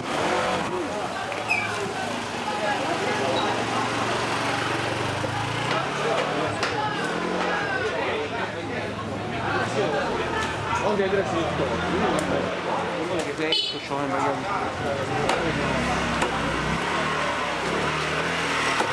typical city market, wonder of the senses...march 2009